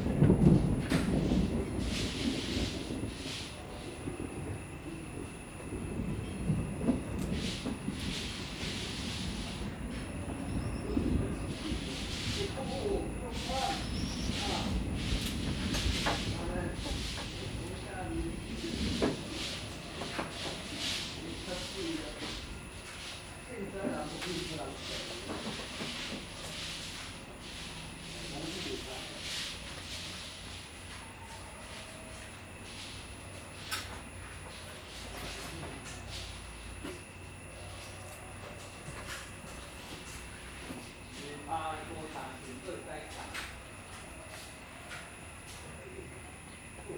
桃米里水上巷3-3號, 埔里鎮 - Thunder sound
Thunder and rain, Play majiang, Sound of insects, Dogs barking
Zoom H2n MS+XY
Nantou County, Puli Township, 水上巷, 4 May 2016, ~16:00